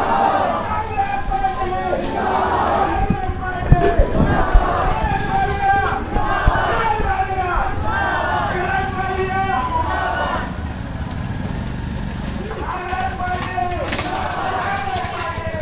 Khirki, New Delhi, Delhi, India - Political rally in Khirki village
Something to do with local elections or something like that...